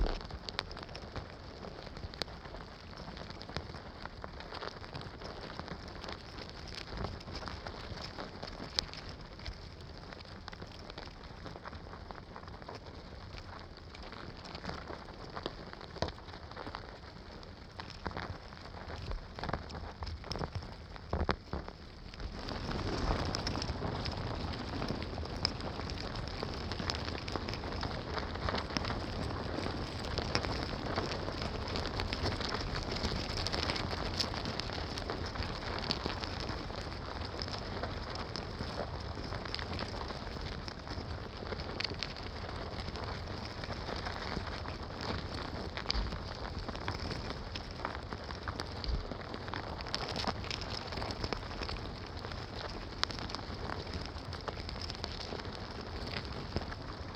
Wood ants nest, Vogelsang, Zehdenick, Germany - Wood ants explore contact mics placed on their nest
Wood ants build impressively mountainous nests from forest debris. From it their paths into the surrounding forest radiate outwards in constant activity. Many immediately seethe over objects in the way, e.g. contact mics gently placed on their nest, which they quickly decide are no threat.